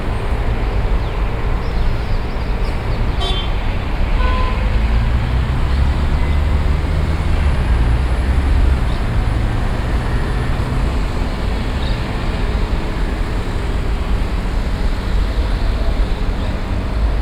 Sevilla, Provinz Sevilla, Spanien - Sevilla - city soundsacpe from the hotel roof
On the roof of a hotel - the city atmosphere in the morning time.
international city sounds - topographic field recordings and social ambiences
10 October 2016, 09:30